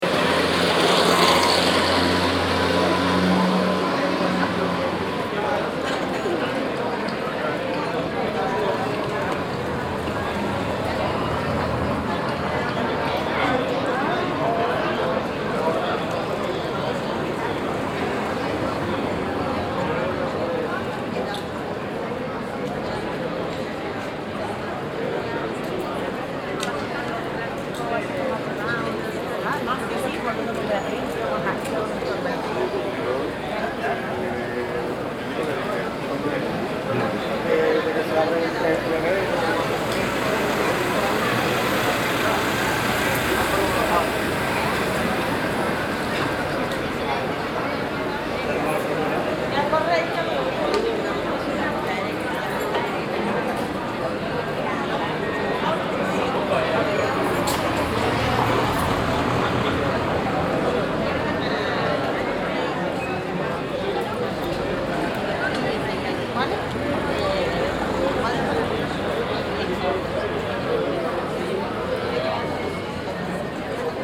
Sevilla, Provinz Sevilla, Spanien - Sevilla - Calle San Luis - people standing in a line
In the narrow street Calle San Luis during the white night. People standing and talking in a line to access the church. The sounds of voices and traffic passing by.
international city sounds - topographic field recordings and social ambiences
Sevilla, Spain, October 2016